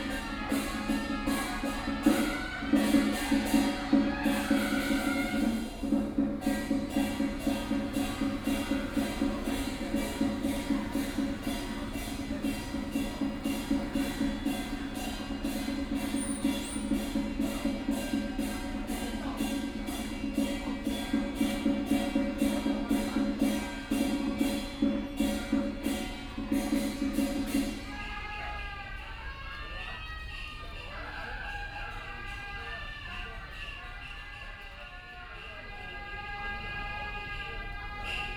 文龍宮, 鼓山區 - Puja
At Temple Square, Puja
Sony PCM D50+ Soundman OKM II